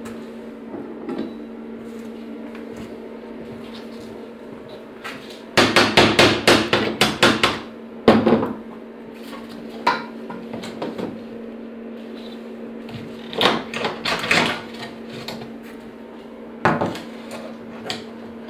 Neustadt-Süd, Köln, Deutschland - cologne, kyllstraße. metal workshop
Inside a metal workshop. The sound of steps, preparation of tools, switch on of an electric engine, the engine fan and the sound of velving and hammering metal.
soundmap nrw - social ambiences and topographic field recordings